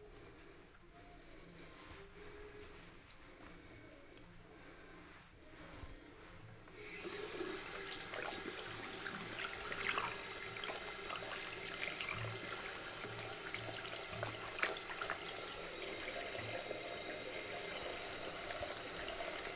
Ascolto il tuo cuore, città. I listen to your heart, city. Several chapters **SCROLL DOWN FOR ALL RECORDINGS** - Evening walk with plastic waste in the time of COVID19 Soundwalk
Tuesday March 17 2020. Walking in San Salvario district in the evening, including discard of plastic waste, one week after emergency disposition due to the epidemic of COVID19.
Start at 8:55 p.m. end at 9:01 p.m. duration of recording 26'16''
The entire path is associated with a synchronized GPS track recorded in the (kml, gpx, kmz) files downloadable here:
Torino, Piemonte, Italia, 17 March 2020, 20:55